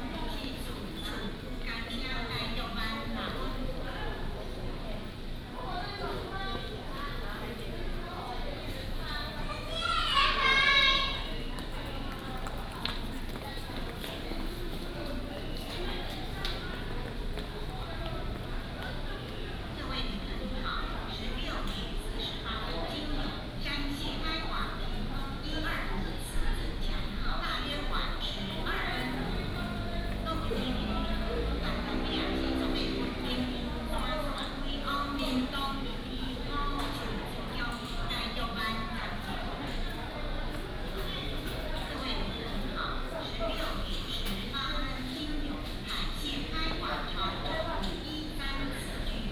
Xinying Station, Xinying District - Station Message Broadcast
At the station hall, Station broadcasting
Tainan City, Taiwan